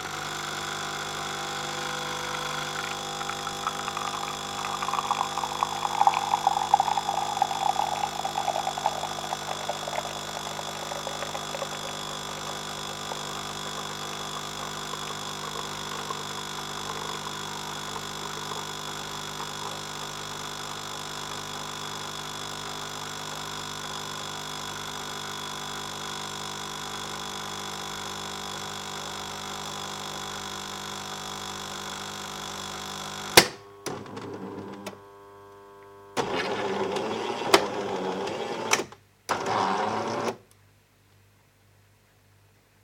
coffee machine at AEG, Muggenhof/Nuremberg
coffee machine at AEG